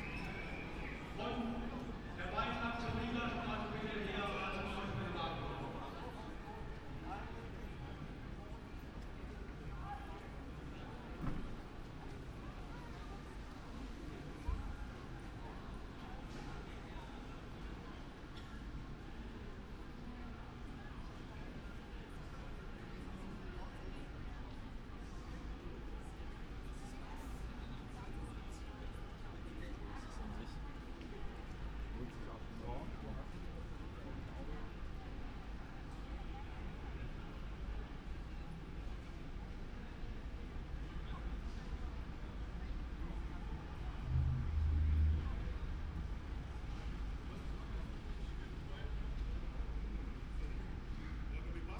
berlin, bürknerstraße: in front of radio aporee - 1st of May demonstration
1st of May demonstration passing-by
(Sony PCM D50, Primo EM172)